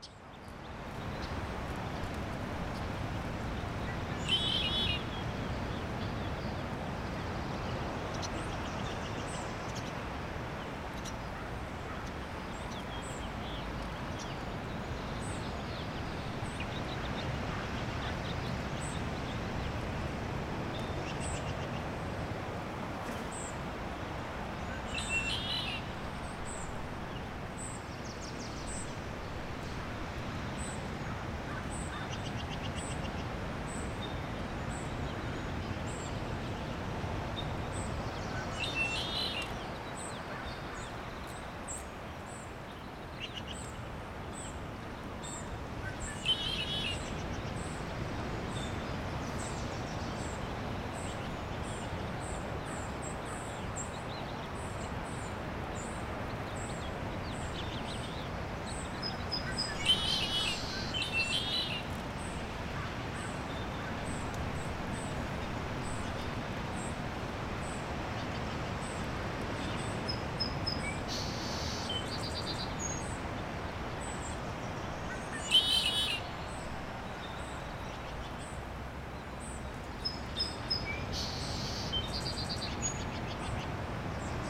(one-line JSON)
{"title": "Stinson beach birds, CA", "description": "a number of birds calling at a small lagoon behind the beach", "latitude": "37.90", "longitude": "-122.64", "altitude": "5", "timezone": "Europe/Tallinn"}